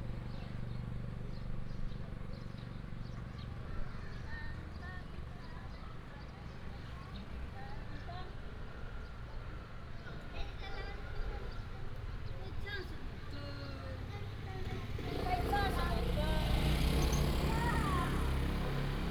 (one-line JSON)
{"title": "Jialan, Jinfeng Township, Taitung County - In the streets of the tribe", "date": "2018-04-03 16:12:00", "description": "In the streets of the tribe, Traffic sound, Dog barking, Bird cry", "latitude": "22.59", "longitude": "120.96", "altitude": "84", "timezone": "Asia/Taipei"}